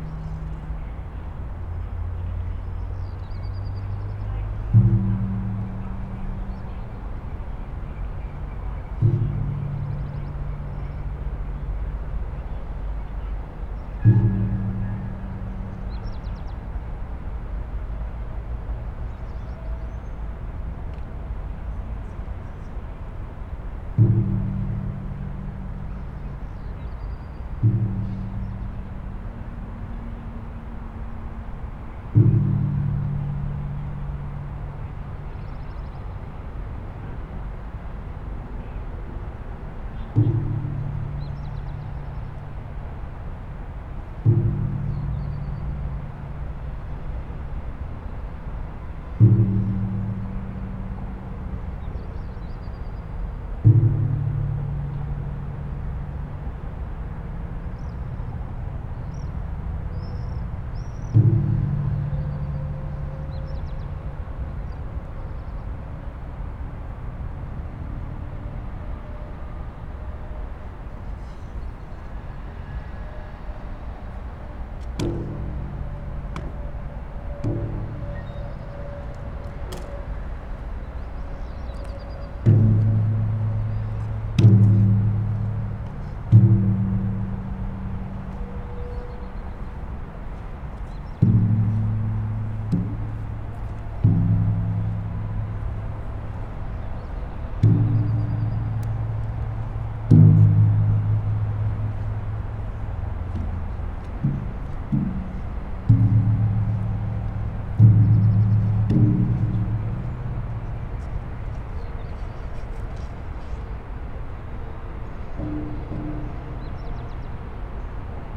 Kienlesbergstraße, Ulm, Deutschland - Kienlesberg Brücke Mallet Stick
For an upcoming sound art project i recorded the new tram bridge (Kienlesberg Brücke) with mallet & Drum sticks. Recorded with 2 Lom Audio Usi Microphones in Spaced AB recording into a Sony M10
Ulm, Germany, 9 July 2018